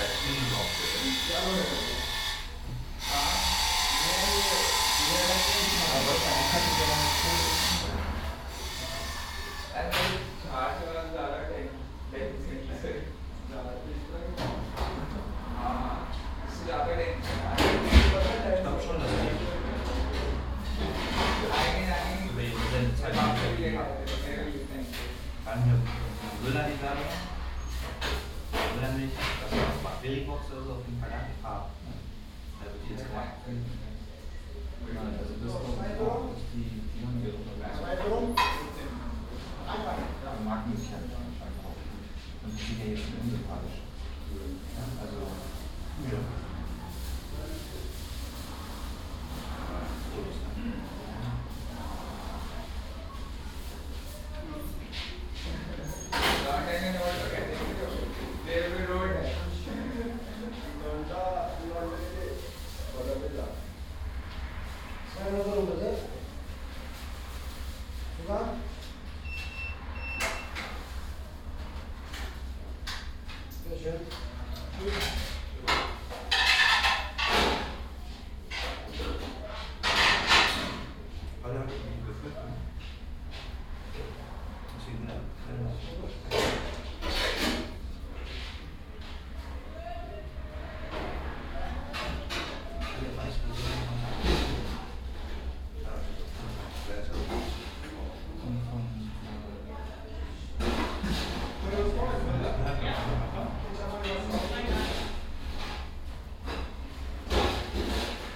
Snack-bar on a Saturday evening, people coming and going, ordering, cooking, paying, people talking, some traffic from outside.
Binaural recording, Soundman OKM II Klassik microphone with A3-XLR adapter, Zoom F4 recorder.
Kronshagen, Germany, 8 July 2017, 20:30